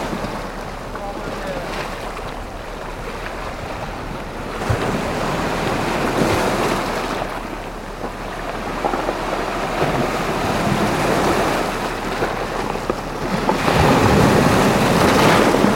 Ulleung-eup foreshore - Ulleung-do foreshore
at the edge of an ancient volcano that rises sharply from the East Sea
Ulleung-gun, Gyeongsangbuk-do, South Korea, 1 June 2012